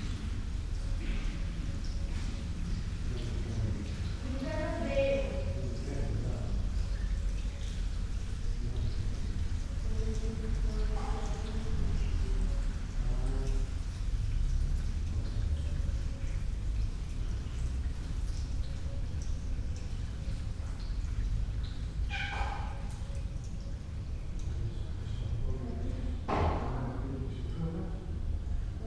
inner yard window, Piazza Cornelia Romana, Trieste, Italy - phone call